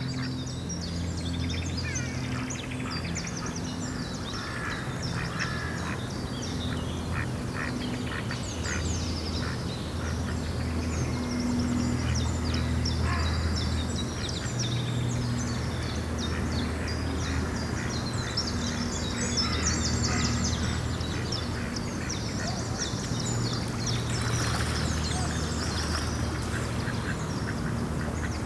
Bois des Bruyères, Waterloo, Belgique - Birds near the bond ambience
Oiseaux au bord de l'étang.
Joggers, dogs.
Tech Note : Ambeo Smart Headset binaural → iPhone, listen with headphones.